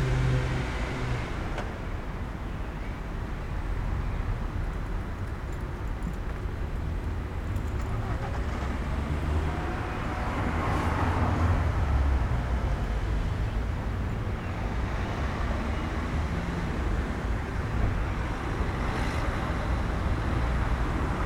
Kidričeva, Nova Gorica, Slovenia - Sound of car's engine
Siting on a bench near the road recording the engine of a car parked nearby.